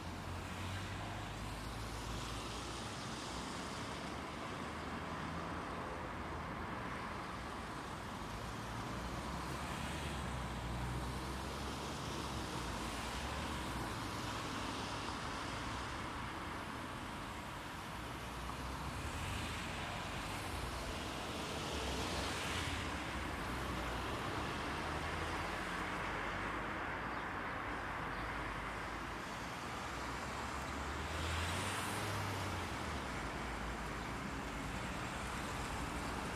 Dans le quartier de Châtelaine sur le pont où passe les trains pour l'aéroport. On On entend les écoliers, le train, les voitures et le chantier à côté.
In the Châtelaine district on the bridge where the trains to the airport pass. We can hear the schoolchildren, the train, the cars and the construction site nearby.
Rec H2n - processed
Schweiz/Suisse/Svizzera/Svizra